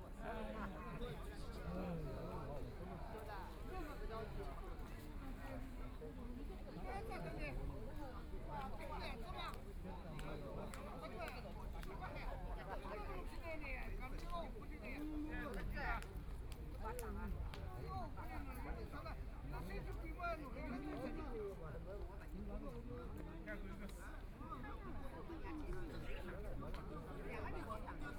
Penglai Park, Shanghai - chatting
Many elderly people gathered in the sun chatting and playing cards ready, Trumpet, Binaural recording, Zoom H6+ Soundman OKM II
Shanghai, China, 29 November 2013